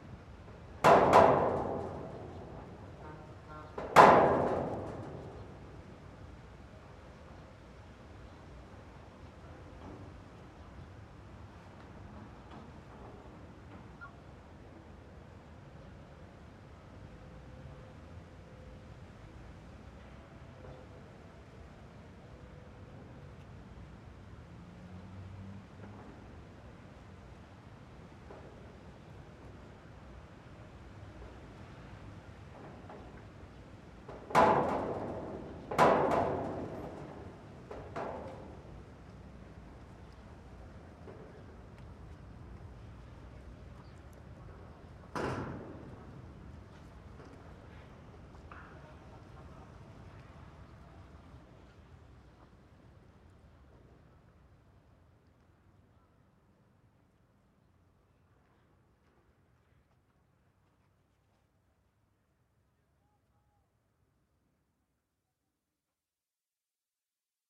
{"title": "Al-Qahira, Ägypten - metal & wind", "date": "2012-05-02 08:18:00", "description": "recorded during a soundArtWorkShop held by ludger hennig + rober rehnig @ GUC activityWeek 2012 with:\nnissmah roshdy, amira el badry, amina shafik, sarah fouda, yomna farid, farah.saleh, alshiemaa rafik, yasmina reda, nermin mohab, nour abd elhameed\nrecording was made with:\n2 x neumann km 184 (AB), sounddevice 722", "latitude": "29.99", "longitude": "31.44", "altitude": "300", "timezone": "Africa/Cairo"}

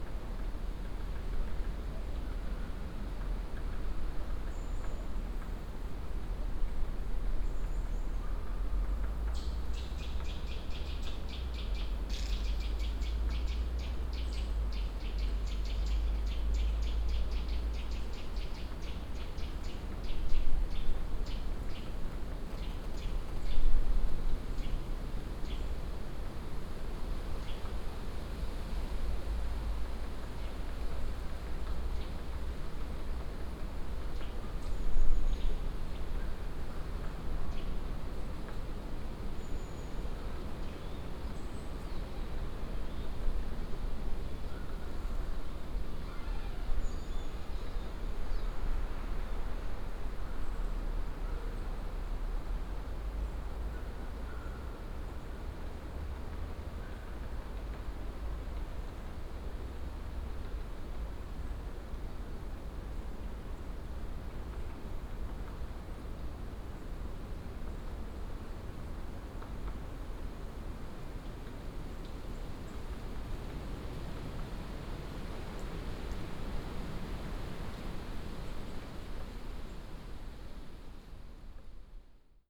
{"title": "Morasko Nature Reserve, near beaver pond - forest ambience", "date": "2015-11-11 10:20:00", "description": "(binaural) autumn forest ambience in Morasko nature reserve. (sony d50 + luhd pm01bin)", "latitude": "52.48", "longitude": "16.90", "altitude": "132", "timezone": "Europe/Warsaw"}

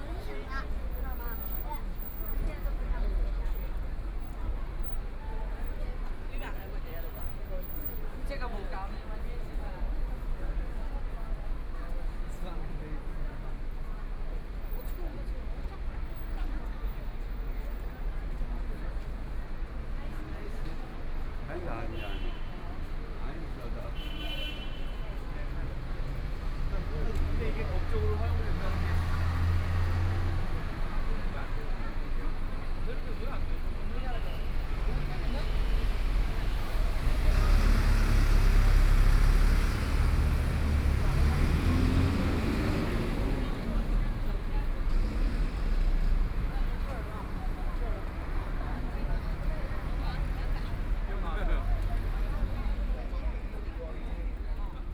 {"title": "east Nanjing Road, Shanghai - Walking on the road", "date": "2013-11-23 18:14:00", "description": "Walking on the road, walking in the Business Store hiking area, Very many people and tourists, Binaural recording, Zoom H6+ Soundman OKM II", "latitude": "31.24", "longitude": "121.48", "altitude": "26", "timezone": "Asia/Shanghai"}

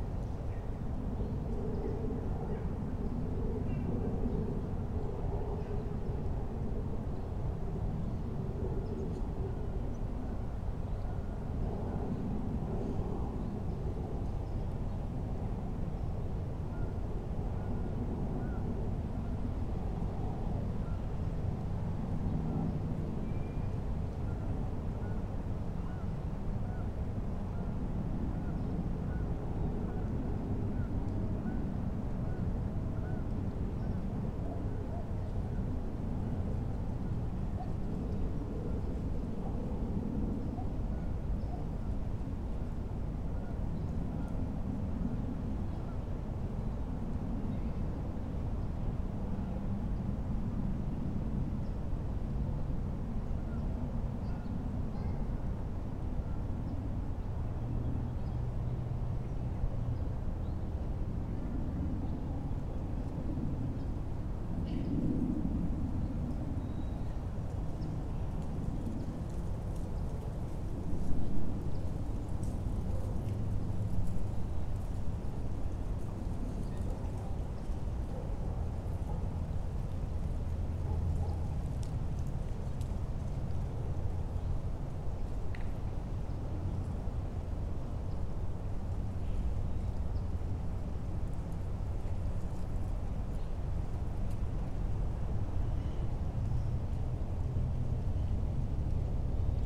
{"title": "Manning Rd SW, Marietta, GA, USA - Laurel Park - Pond", "date": "2021-02-17 17:10:00", "description": "One of the ponds at Laurel Park. The recorder was placed on a picnic table to capture the soundscape of the surrounding area. Birds, park visitors, children playing, traffic, people walking around the pond, and noises from the nearby houses can all be heard. The water in the pond is still and produces no sound of its own.\n[Tascam Dr-100mkiii & Primo EM272 omni mics]", "latitude": "33.95", "longitude": "-84.57", "altitude": "317", "timezone": "America/New_York"}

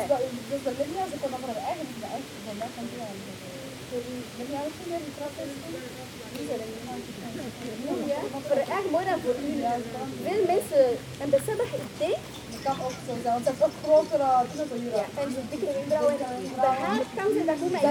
{"title": "Leuven, Belgique - Young people in the park", "date": "2018-10-13 16:05:00", "description": "In a quiet park, wind in the sycamores and some young people discussing.", "latitude": "50.88", "longitude": "4.71", "altitude": "42", "timezone": "Europe/Brussels"}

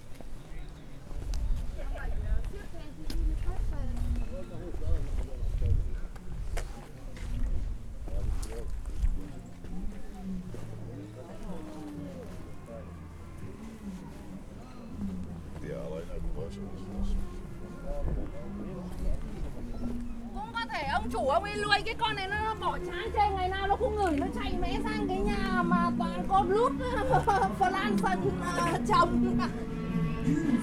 {"title": "berlin, werbellinstraße: flohmarkt - the city, the country & me: flea market", "date": "2011-04-17 15:48:00", "description": "short soundwalk around the flea market, a crazy chinese (?) woman is talking to everyone in chinese but no one has a clue what she's saying\nthe city, the country & me: april 17, 2011", "latitude": "52.48", "longitude": "13.43", "timezone": "Europe/Berlin"}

